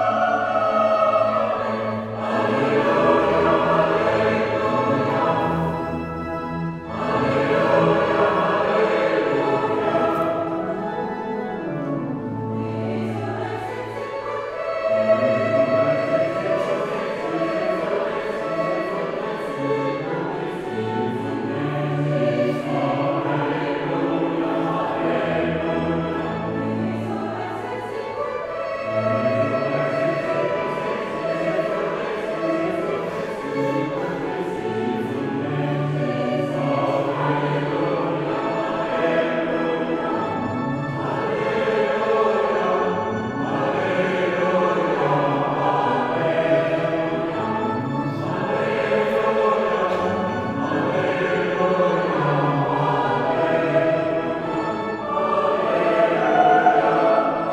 {"title": "clervaux, church, mass", "date": "2011-07-12 22:53:00", "description": "The church organ and choir at the Mother Gods Procession day.\nClervaux, Kirche, Messe\nDie Kirchenorgel und der Chor bei der Muttergottesprozession. Aufgenommen von Pierre Obertin im Mai 2011.\nClervaux, église, messe\nL’orgue de l’église et la chorale le jour de la procession de la Vierge. Enregistré par Pierre Obertin en mai 2011.\nProject - Klangraum Our - topographic field recordings, sound objects and social ambiences", "latitude": "50.06", "longitude": "6.03", "altitude": "358", "timezone": "Europe/Luxembourg"}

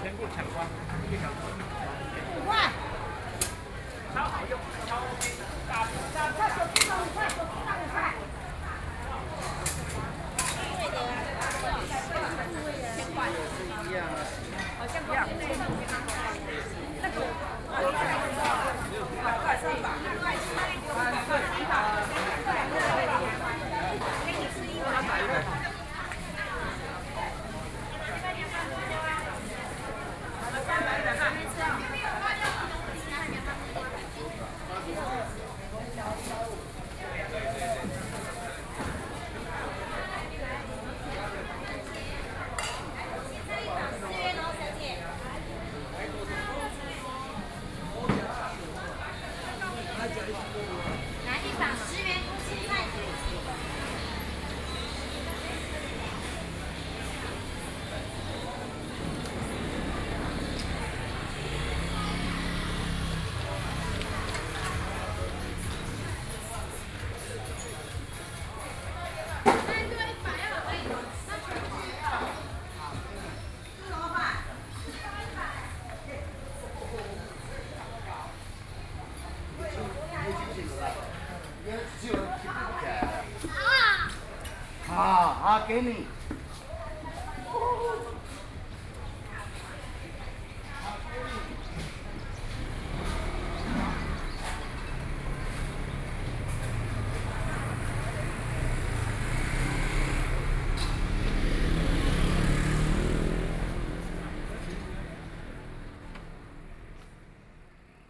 {"title": "Yangmei, Taoyuan County - Traditional Market", "date": "2013-08-14 11:58:00", "description": "walking in the Traditional Market, Sony PCM D50+ Soundman OKM II", "latitude": "24.92", "longitude": "121.18", "altitude": "191", "timezone": "Asia/Taipei"}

